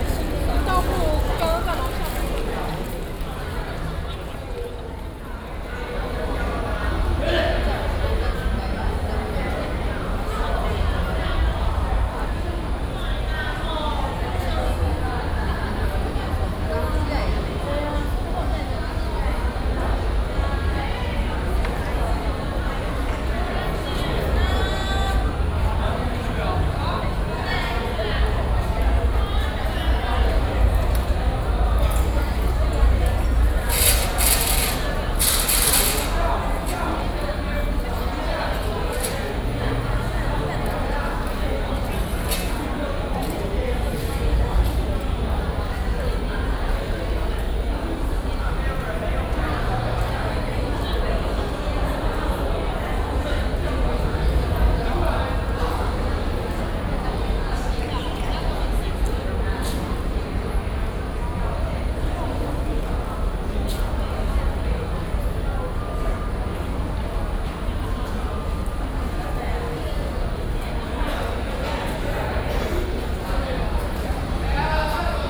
National Concert Hall., Taiwan - waiting
People waiting to enter the concert hall, Sony PCM D50 + Soundman OKM II